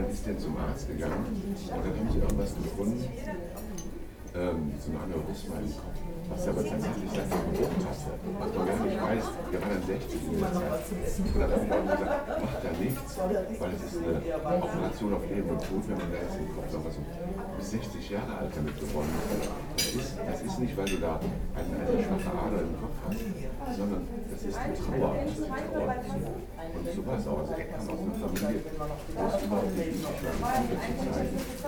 lippstadt, lippischer hof, breakfast room
morning time in the hotel breakfast room. a conversation on the table near by
social ambiences/ listen to the people - in & outdoor nearfield recordings